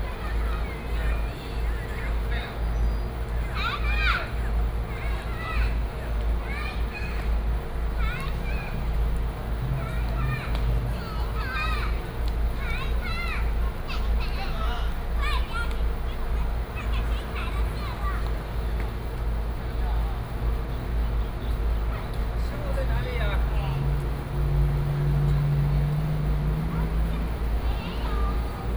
瀨南街16巷, Yancheng Dist., Kaohsiung City - In the square
In the square, Air conditioning and air conditioning noise, Child on the square
Binaural recordings, Sony PCM D100+ Soundman OKM II
Yancheng District, Kaohsiung City, Taiwan, 30 March 2018